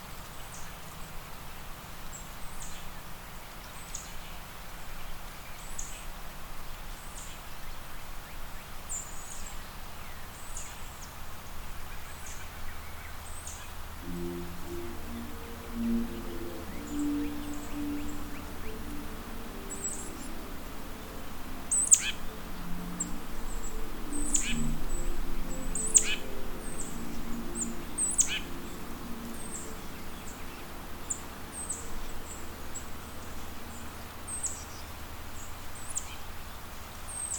Recorded on the Constitution Marsh Audubon Center and Sanctuary - a designated New York State Bird Conservation Area.
Zoom H6
New York, United States of America